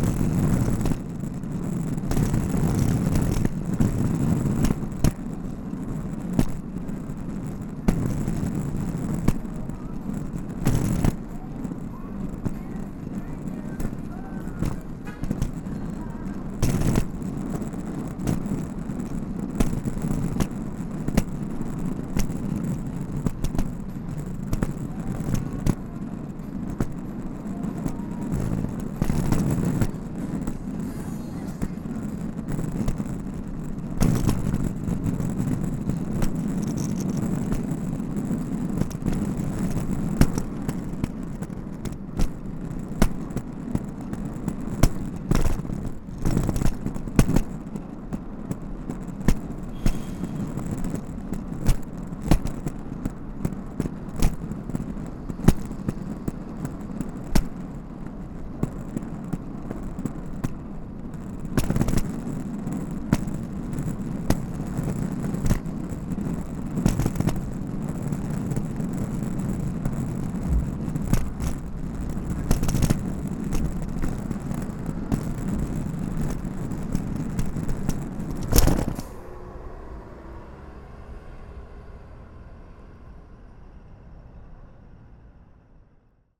E Congress St, Detroit, MI, USA - USA Luggage Bag Drag 1
Recorded as part of the 'Put The Needle On The Record' project by Laurence Colbert in 2019.
Michigan, United States, 16 September 2019